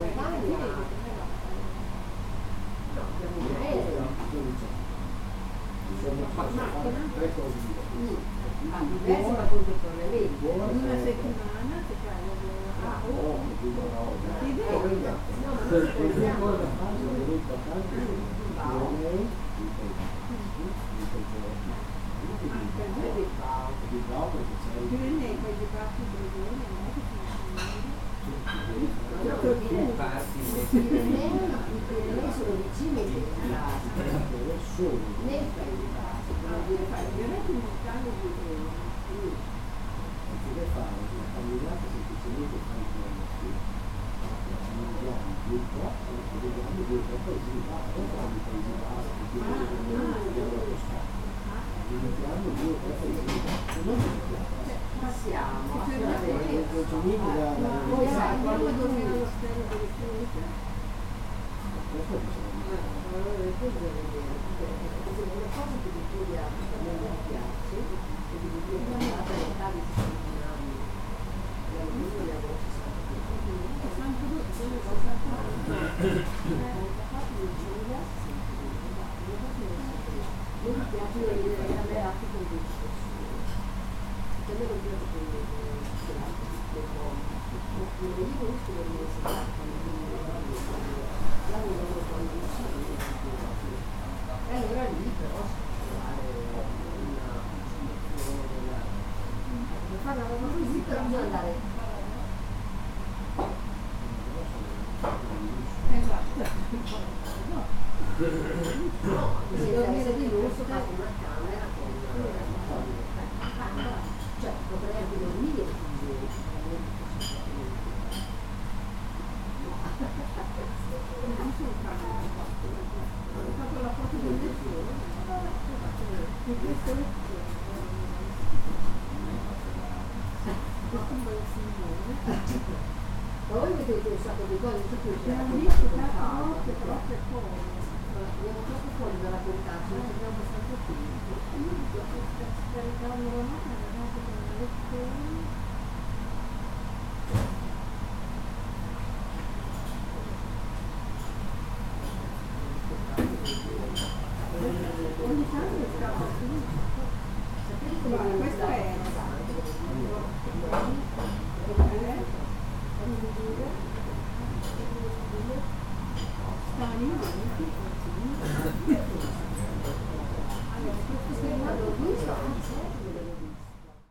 trattoria alla nuova speranza, castello 145, venezia